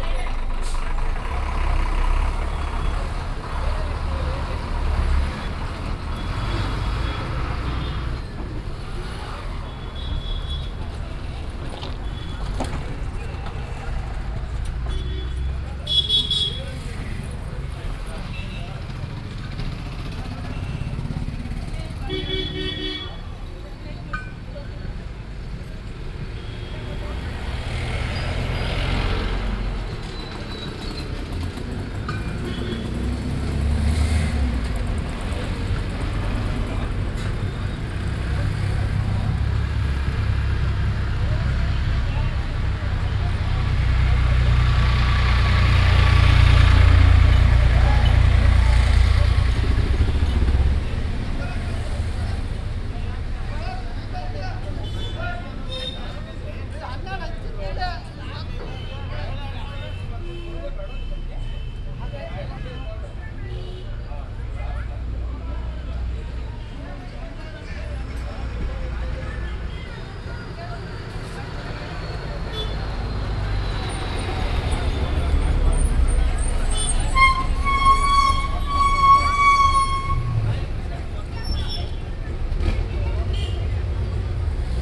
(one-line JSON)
{"title": "Saundatti, Saundatti road, crossroad", "date": "2011-01-22 19:57:00", "description": "India, Karnataka, road traffic, bus, rickshaw, binaural", "latitude": "15.77", "longitude": "75.11", "altitude": "681", "timezone": "Asia/Kolkata"}